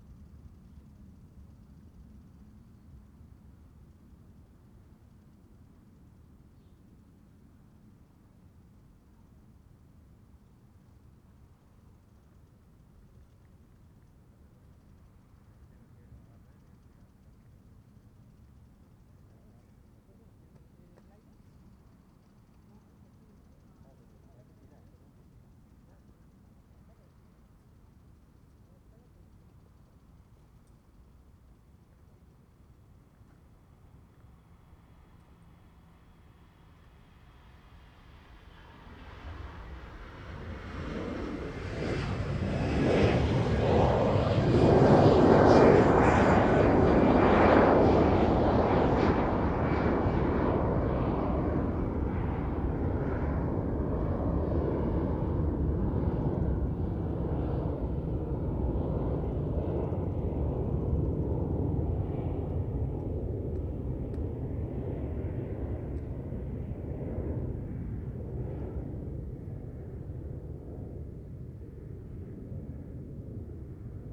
Llobregat - Barcelone - Espagne
Entre la plage et la piste de décollage.
Ambiance.
ZOOM F3 + AKG C451B
Catalunya, España, 24 July 2022